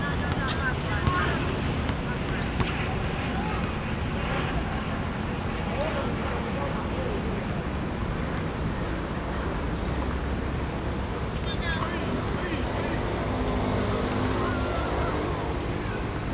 Sanchong, New Taipei City - Play basketball
Play basketball, Next to schools, Rode NT4+Zoom H4n